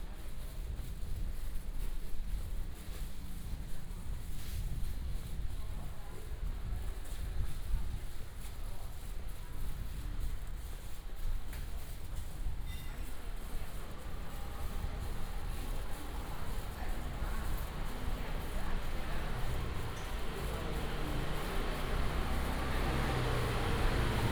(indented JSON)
{
  "title": "新竹中央市場, Hsinchu City - the traditional market",
  "date": "2017-01-16 08:48:00",
  "description": "Walking in the traditional market inside, Traffic Sound",
  "latitude": "24.80",
  "longitude": "120.97",
  "altitude": "29",
  "timezone": "GMT+1"
}